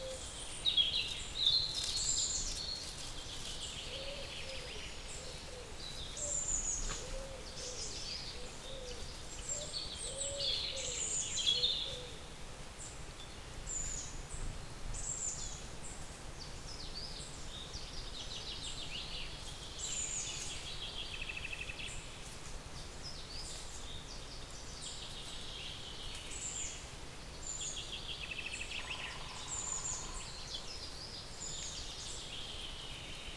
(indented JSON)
{
  "title": "Kneške Ravne, Most na Soči, Slovenia - In the wood",
  "date": "2021-06-10 19:49:00",
  "description": "Birds, mouses walking.\nMixPre2 with Lom Uši Pro, AB 50cm.",
  "latitude": "46.22",
  "longitude": "13.84",
  "altitude": "691",
  "timezone": "Europe/Ljubljana"
}